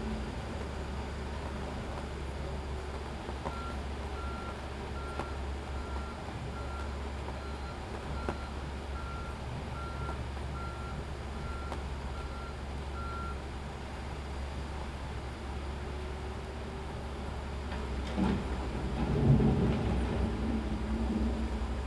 Salvador, Bahia, Brazil - Roadworks and Thunder, pre World Cup 2014
Recorded from the 5th floor of my apartment, in Barra, Salvador in Brazil. The seemingly never ending roadworks are in full swing in this World Cup 2014 host city. There are growing doubts that they will be finished in time. They say that all will be completed, but in the Brazilian way. With the paint still wet....The roadworks can be heard, as always, along with the omnipresent shore-break. The thunder is starting to roll in, as we are now in the rainy season. The ominous soundscape mirrors the growing unrest in the country, at this; "their" World Cup. Only 20 days to go.....